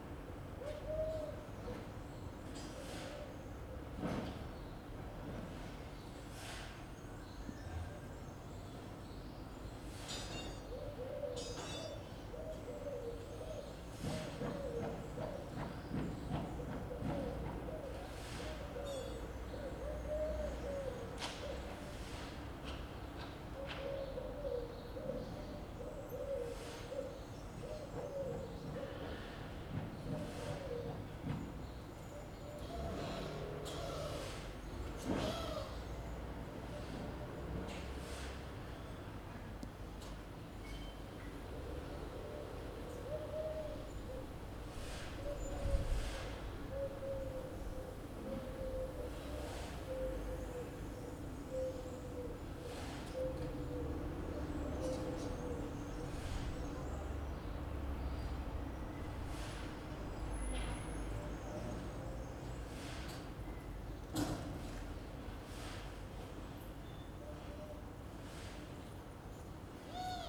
Carrer de Joan Blanques, Barcelona, España - 2020 March 23 BCN Lockdown
Recorded from a window during the Covid-19 lockdown. It's a sunny spring morning with birds singing and some movement of people, even on the face of the lockdown.
Barcelona, Catalunya, España, 24 March, 09:15